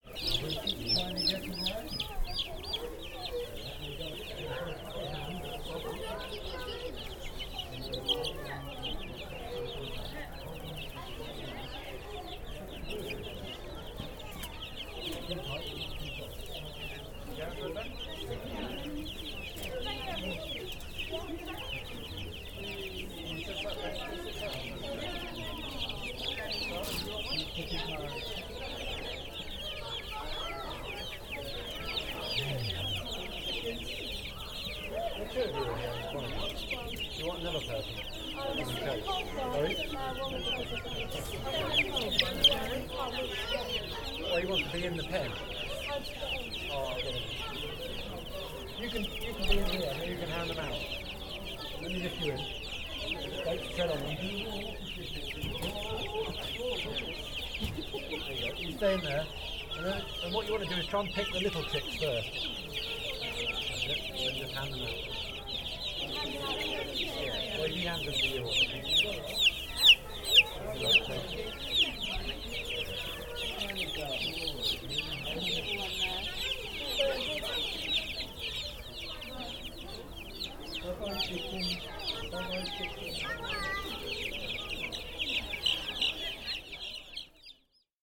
The Lambing Shed, Amners Farm, Burghfield, UK - Baby chicks and people holding them
This is the sound of many chicks in a small pen with food, water and a light. This recording was made on a public lambing day on the farm, and several people from the farm are there to look after the animals and also to show people how to hold them. So an opportunity to hold a baby chick! It's so beautiful and everyone is so charmed by the very appealing little chicks. I love their wee sounds - it is the sound of spring! Recorded with Naiant X-X omnidirectional microphones lowered into the pen.